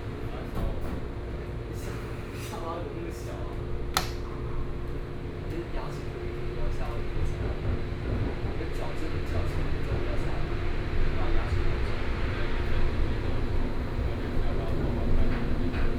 114台灣台北市內湖區 - Neihu Line (Taipei Metro)
from Huzhou Station to Taipei Nangang Exhibition Center Station, Binaural recordings, Sony PCM D50 + Soundman OKM II